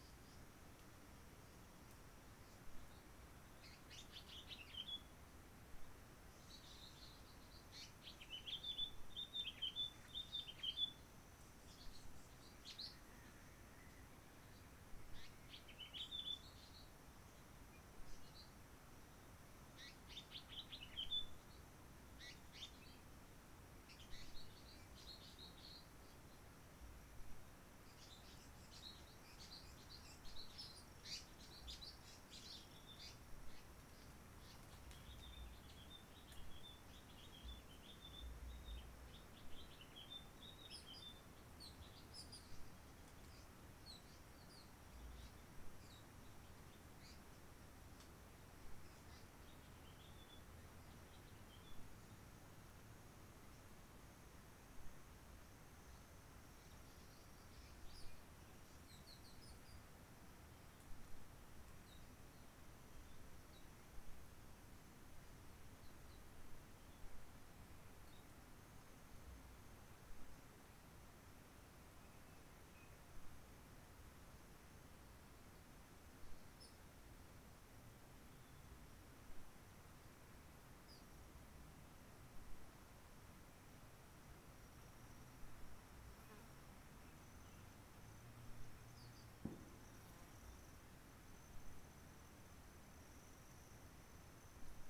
Roche Merveilleuse, Réunion - CHANT DU ZOISEAU-LA-VIERGE
CHANT DU Z'OISEAU-LA-VIERGE terpsiphone de bourbon, ce chant est assez rare, il faut des heures d'enregistrement pour en avoir un
Grand Merci au virus COVID-19 pour avoir permis ce silence pour profiter de ces chants d'oiseaux pas encore totalement disparus, avec l'arrêt du tourisme par hélicoptère!
Saint-Pierre, La Réunion, France, 1 April 2020, 11:42